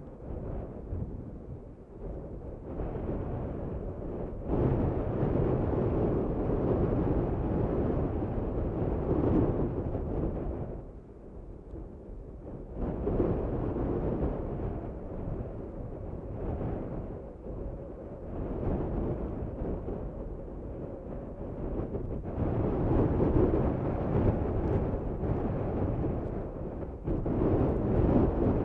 Bahia Inutil, Magallanes y la Antártica Chilena, Chile - storm log - erratic boulder train useless bay
erratic boulder field at useless bay, wind 48 km/h, ZOOM F1, XYH-6 cap under hood
Inútil Bay (Spanish: Bahía Inútil) or Useless Bay - The bay was thus named in 1827 by Captain Phillip Parker King, because it afforded "neither anchorage nor shelter, nor any other advantage for the navigator"
Erratic boulder trains (EBTs) are glacial geomorphological results and reveal former ice flow trajectories.